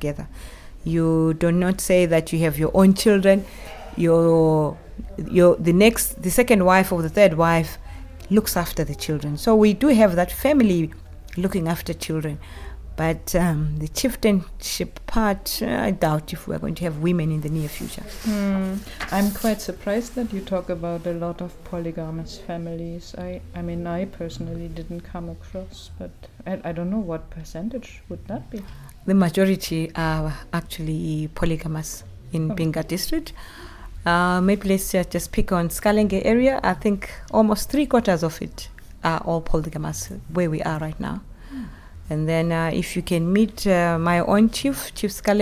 The DA's Office, Binga, Zimbabwe - There's a purpose for me being here...
Mrs. Lydia Banda Ndeti, the District Administrator for Binga, gives us detailed insights in to the daily lives and situations of the rural women in this remote part of the country. As a widow and single mother, she compassionately feels for the women and girls in her district and encourage women to support each other.